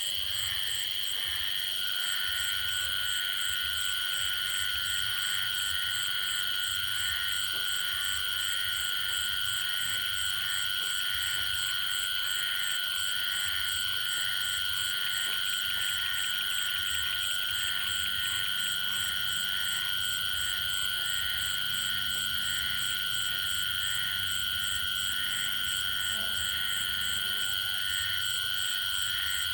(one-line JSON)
{"title": "Koforidua, Ghana - Bonya Amphibians", "date": "2021-08-22 20:15:00", "description": "Variations of amphibian acoustic phenomena documented in Ghana. Specific species will be identified and documented off and onsite. Acoustic Ecologists are invited to join in this research.\n*This soundscape will keep memory of the place since biodiversity is rapidly diminishing due to human settlements.\nRecording format: Binaural.\nDate: 22.08.2021.\nTime: Between 8 and 9pm.\nRecording gear: Soundman OKM II with XLR Adapter into ZOOM F4.", "latitude": "6.07", "longitude": "-0.24", "altitude": "176", "timezone": "Africa/Accra"}